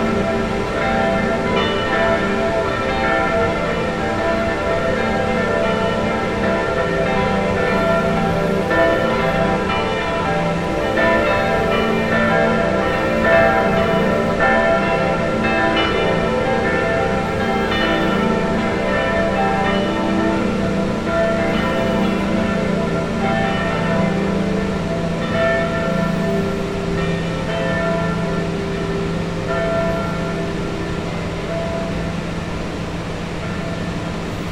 osterglocken & wasserfall, von der villa solitude aus
Bad Gastein, Austria, 4 April 2015, ~21:00